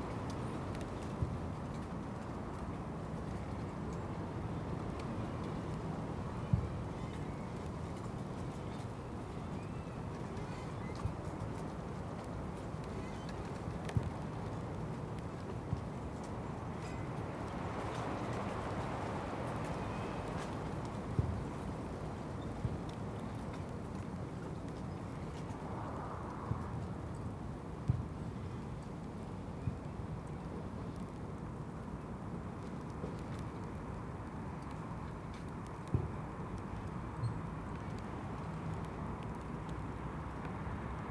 Contención Island Day 34 outer northwest - Walking to the sounds of Contención Island Day 34 Sunday February 7th
The Drive Westfield Drive Parker Avenue Brackenfield Road Salters Road
Sleet driven on the wind
through the treetops
Father and son
kick a yellow football
back and forth
it skids through puddles
Two mistle thrushes move off